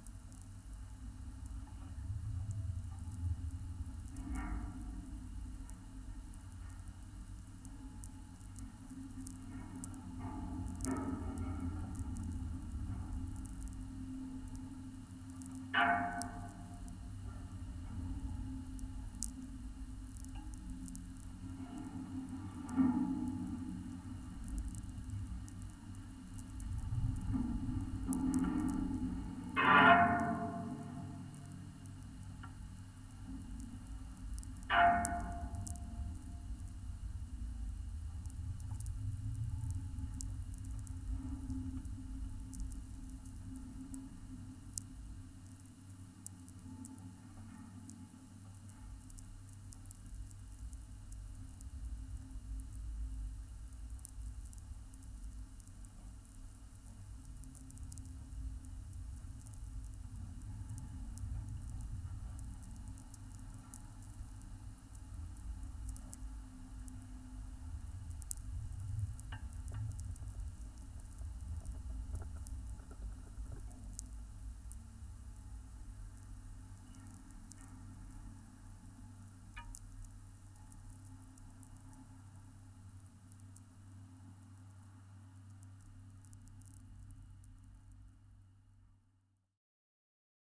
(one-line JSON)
{"title": "Utena, Lithuania, sound study of watertower", "date": "2018-07-08 16:20:00", "description": "examination of aural aspect of abandoned watertower. contact mics and diy electromagnetic antenna", "latitude": "55.49", "longitude": "25.65", "altitude": "147", "timezone": "Europe/Vilnius"}